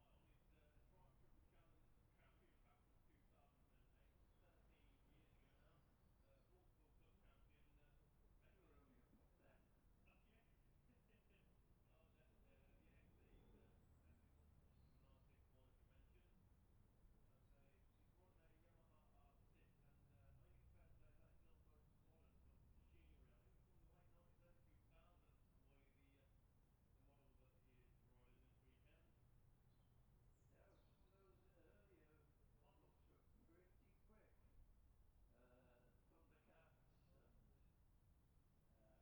Jacksons Ln, Scarborough, UK - olivers mount road racing 2021 ...
bob smith spring cup ... olympus LS 14 integral mics ... running in sort of sync with the other recordings ... starts with 600cc group B and continues until twins group B practices ... an extended time edited recording ...